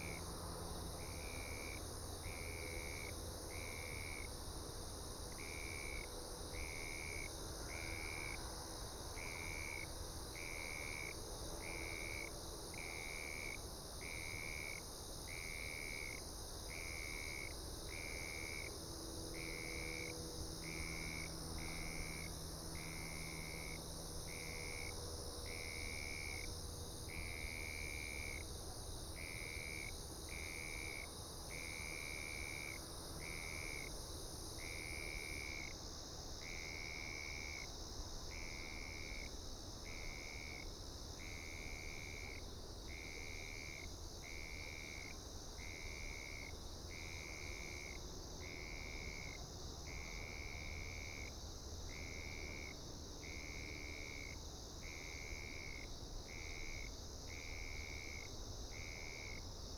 台東市, Taiwan - The park at night
The park at night, The distant sound of traffic and Sound of the waves, Zoom H6 M/S
Taitung City, Taitung County, Taiwan, 17 January